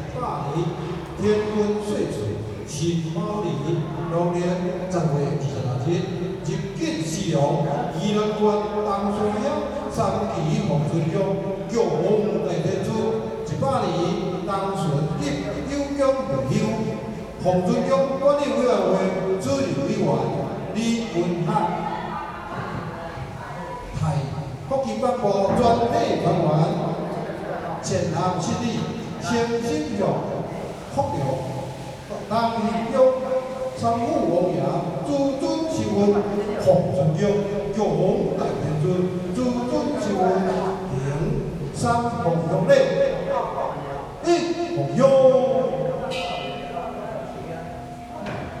東興宮, 新北市貢寮區福隆里 - In the temple
In the temple
Zoom H4n+ Rode NT4